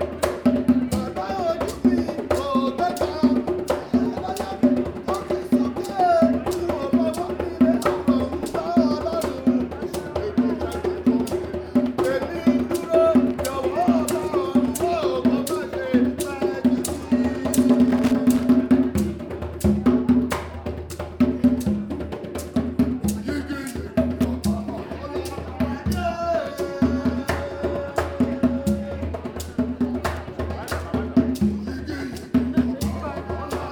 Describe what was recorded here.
We are with many people set for a celebratory dinner in a large hall. It’s the end-of-year thank-you-dinner for all the honorary helpers of the “Humanitas” project & shop. The Nigerian artist and musician Yemi Ojo and his son Leon set out to entertain the guests with some Yoruba music. Yemi explains that the song they are performing here is singing praises to God Almighty “O Yigi Yigi”, a Yoruba version of “Grosser God wir loben dich”, if you want… Yemi and the beat of the Yoruba praise song gets the listeners involved, dran in and finally, on their feet… Links: